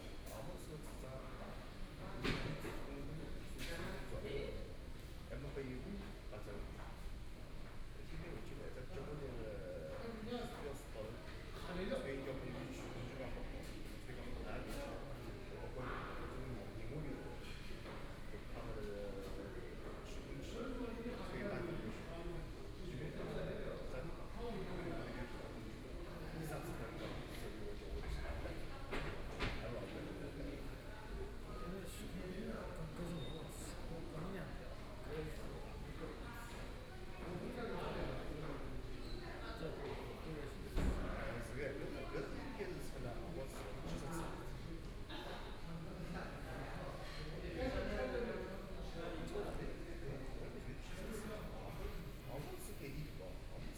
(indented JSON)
{
  "title": "The Seagull On The Bund, Shanghai - In the hotel lobby",
  "date": "2013-12-04 09:57:00",
  "description": "In the hotel lobby, Binaural recording, Zoom H6+ Soundman OKM II",
  "latitude": "31.25",
  "longitude": "121.49",
  "altitude": "21",
  "timezone": "Asia/Shanghai"
}